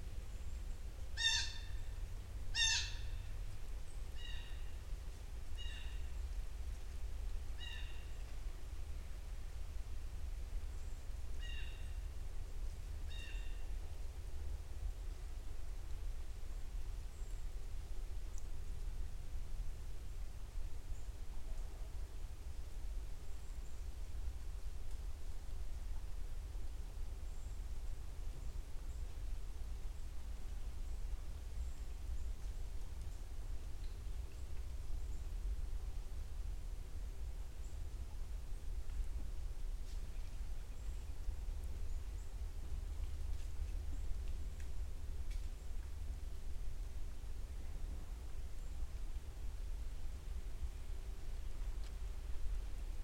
Denmark, ME - Beaver Pond Rd
Walking around the woods. Recorded with Usi mics on a Sound Devices 633